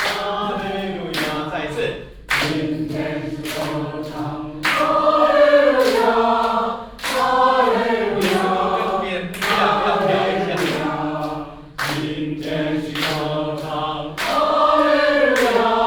埔里國小, Nantou County - Vocal exercises
Elderly choir, Vocal exercises